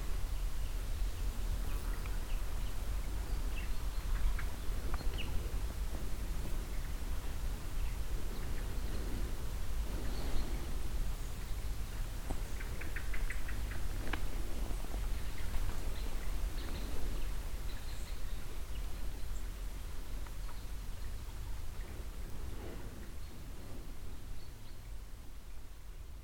nachtmanderscheid, valley
Evening in the forest valley. The sound of birds mild wind and a small stream nearby.
Nachtmanderscheid, Tal
Abends im Waldtal. Das Geräusch von Vögeln, sanftem Wind und einem kleinen Bach in der Nähe.
Nachtmanderscheid, vallée
Le soir, dans la forêt de la vallée. Le chant des oiseaux, un doux vent et un petit ruisseau proche.